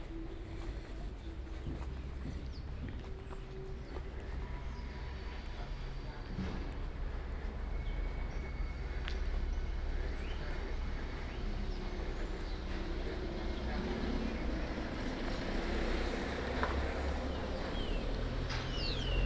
start at former Hotel Francia where Walter Benjamin suicided on September 26th 1940, staircases, Plaça Major, church (closed), station from the entry tunnel, station hall, on railways new and old, market, carre Escultor Mares, Career de La Barca.
Portbou, Girona, Spain, September 2017